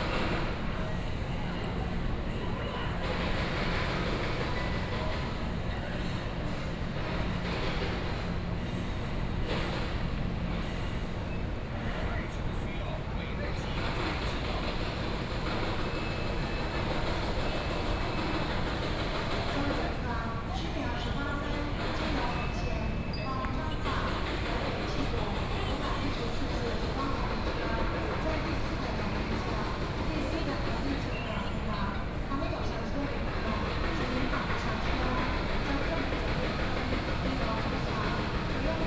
Kaohsiung Station, Taiwan - Station hall
In the station lobby, Voice message broadcasting station, Construction site noise